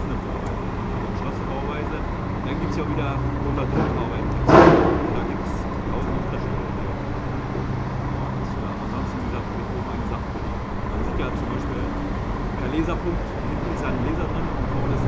berlin: friedelstraße - the city, the country & me: sewer works
excavator loading a truck. site engineer asked me if I performed a noise level measurement - when I said no, he began to explain the works...
the city, the country & me: december 5, 2013